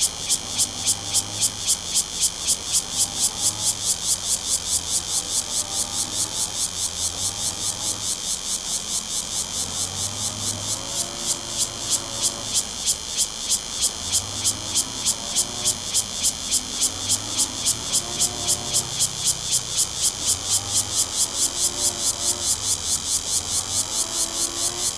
新福里, Guanshan Township - Cicadas sound
Cicadas sound, Traffic Sound, Lawn mower, Very hot weather
Zoom H2n MS+ XY